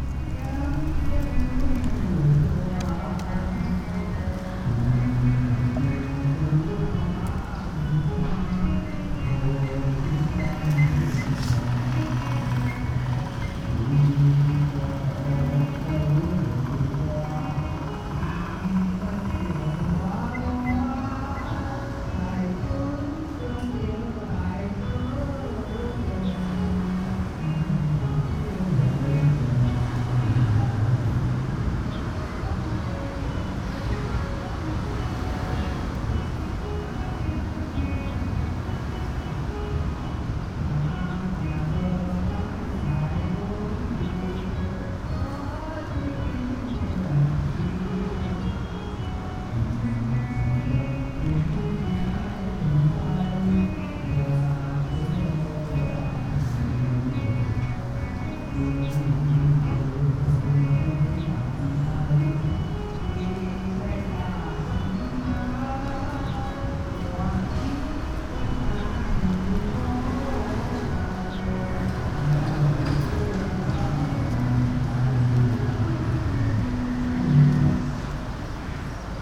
Labor Park, Kaohsiung - Community Centre
An old man is singing traditional folk songs, Sony PCM D50
高雄市 (Kaohsiung City), 中華民國